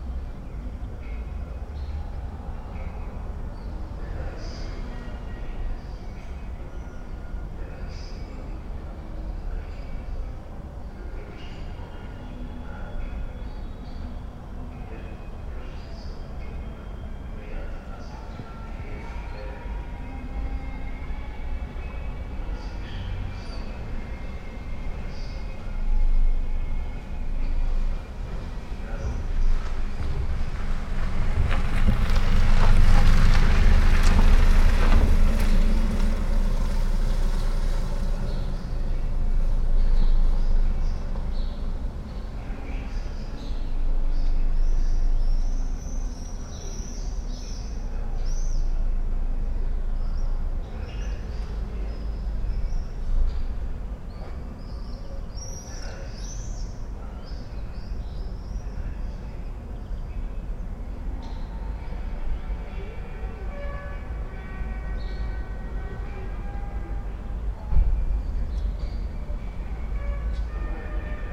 from/behind window, Mladinska, Maribor, Slovenia - nostalgia
swallows, pigeons, blackbirds, cafetiera, cars, song from a radio
10 May 2013